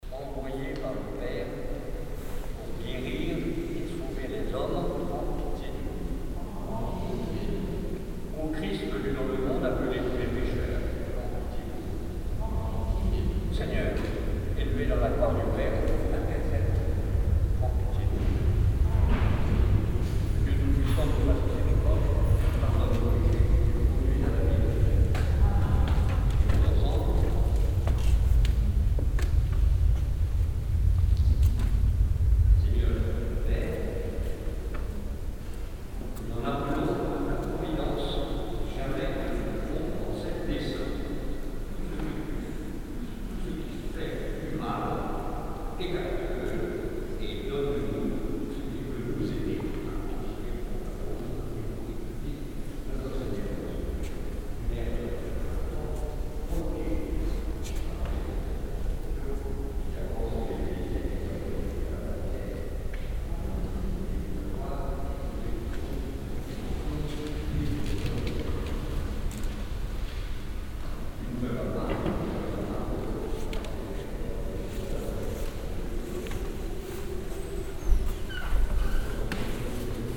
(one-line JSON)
{"title": "paris, church st. eustache, divine service", "description": "a small public divine service in the huge, old catholic church\ninternational cityscapes - social ambiences and topographic field recordings", "latitude": "48.86", "longitude": "2.35", "altitude": "54", "timezone": "Europe/Berlin"}